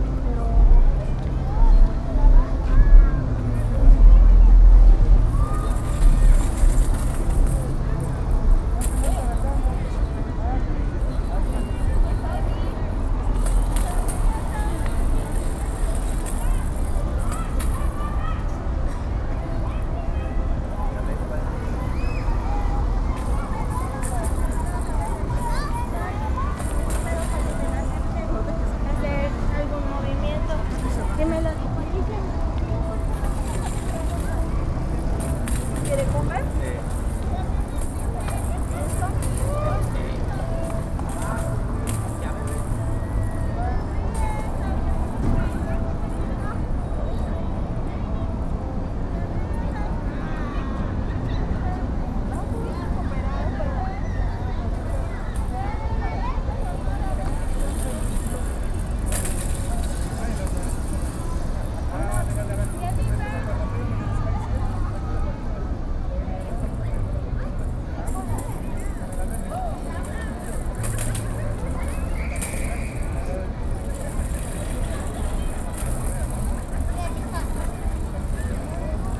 Ignacio Zaragoza, Centro, León, Gto., Mexico - Plaza Expiatorio aún durante la pandemia de COVID-19.
Plaza Expiatorio during the COVID-19 pandemic still.
Now with more people because 41.43% of the country is fully vaccinated to this date.
You can hear the toy of a child playing nearby, people coming and going, cars passing, a traffic officer, among other things.
I made this recording on October 23rd, 2021, at 8:34 p.m.
I used a Tascam DR-05X with its built-in microphones.
Original Recording:
Type: Stereo
Plaza Expiatorio aún durante la pandemia de COVID-19.
Ahora ya con más gente debido a que el 41,43% del país está completamente vacunada a esta fecha.
Se escucha el juguete de un niño jugando en la cercanía, gente que va y viene, carros pasando, un oficial de tránsito, entre varias cosas más.
Esta grabación la hice el 23 de octubre de 2021 a las 20:34 horas.
Usé un Tascam DR-05X con sus micrófonos incorporados.